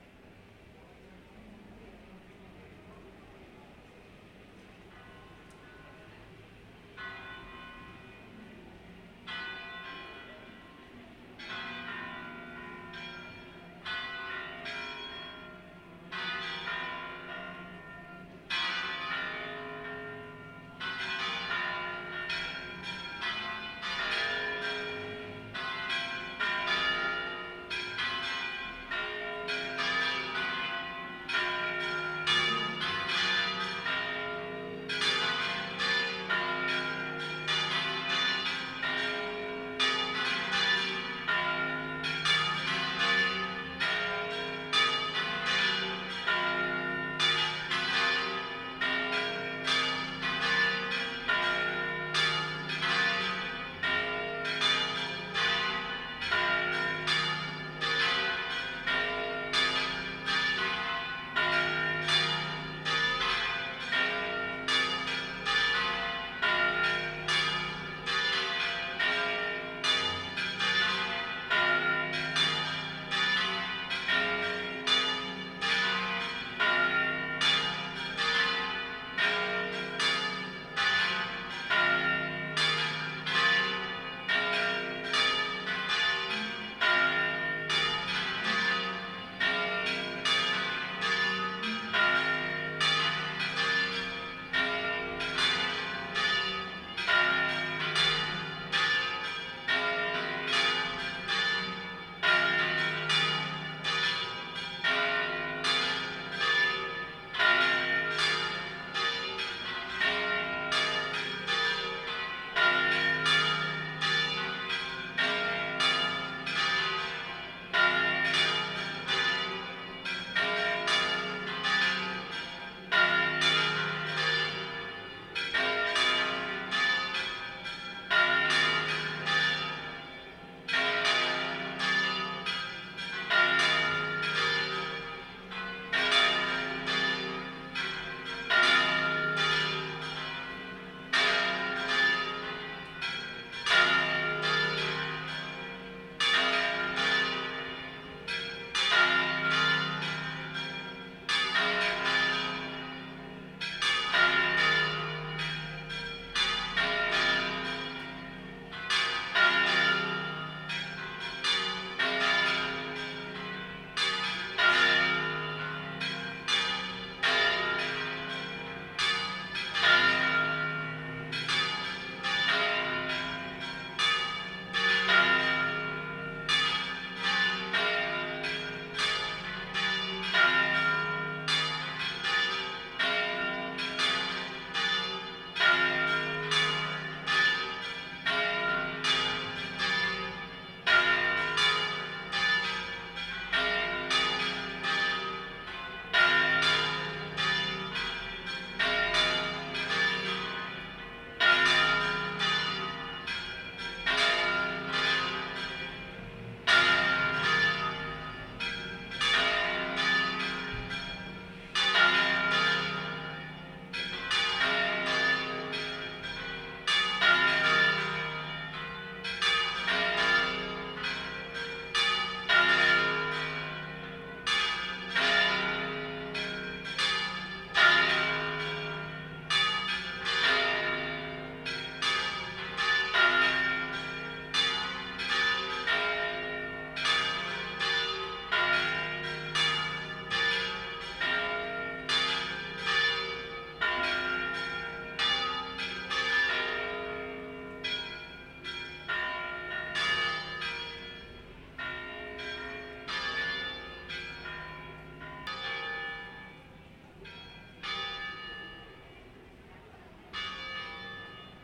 Pl. de Francisco Escolar, Fuenlabrada, Madrid, España - Campanas: Sonidos de los rituales católicos
Repique de campanas de la Iglesia de San Esteban Protomártir en honor a la celebración del Santísimo Cristo de la Misericordia. Es un día festivo en el que realizan una pequeña procesión con la imagen del cristo por el centro de la ciudad. Sonidos de los rituales católicos.
Comunidad de Madrid, España, 14 September 2021, 19:17